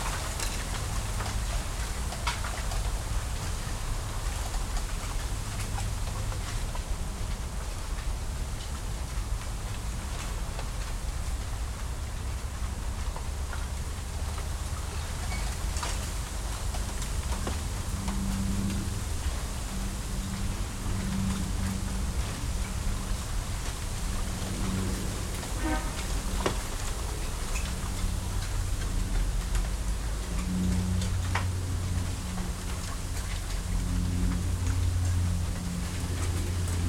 Bamboo grove in Tsuji, Rittō City, Shiga Prefecture, Japan - Wind in Bamboo
Wind passing through a small bamboo grove with some dry and fallen branches, aircraft and nearby traffic. Recorded with a Sony PCM-M10 recorder and two small omnidirectional microphones attached to either side of a backpack lying on the ground.
Rittō-shi, Tsuji, 八王子薬師堂, 13 March 2019, 14:40